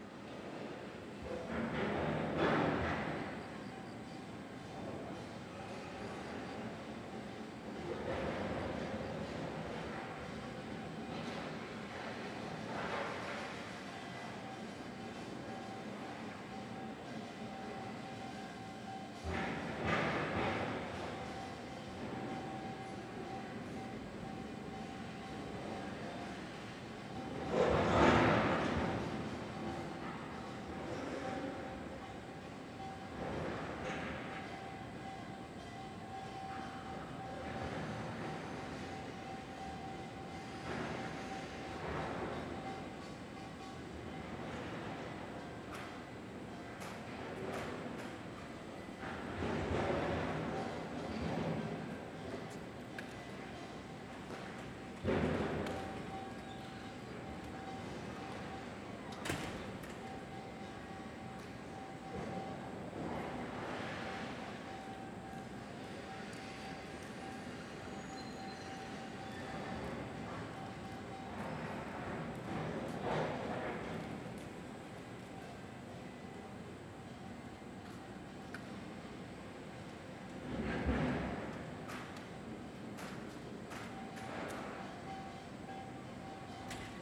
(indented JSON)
{
  "title": "대한민국 서울특별시 서초구 서초3동 741-2 - Seoul Arts Center, Construction Yard",
  "date": "2019-09-11 05:11:00",
  "description": "Seoul Arts Center, Construction Yard, students practising traditional percussion.\n국립국악원 야외 공사장, 사물놀이 연습",
  "latitude": "37.48",
  "longitude": "127.01",
  "altitude": "86",
  "timezone": "Asia/Seoul"
}